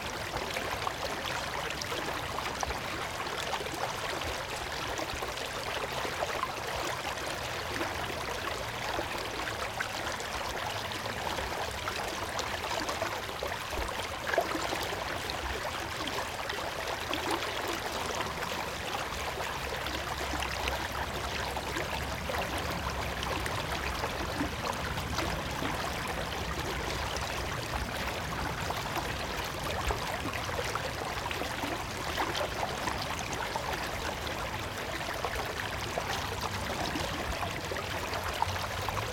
wülfrath, oberdüssler weg, düsselbach
frühjahr 07 nachmittags - fluss der noch kleinen düssel unter autobahnbrücke nahe strasse
Spring 2007 in the late afternoon. The peaceful gurgling of a small river appearing under a long highway bridge nearbye a street
project - :resonanzenen - neanderland soundmap nrw - sound in public spaces - in & outdoor nearfield recordings